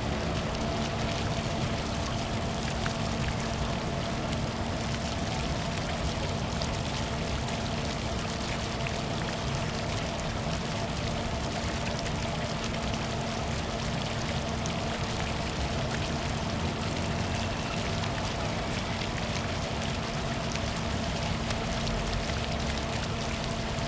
Small fountains, in the Park, Traffic noise
Zoom H2n MS+XY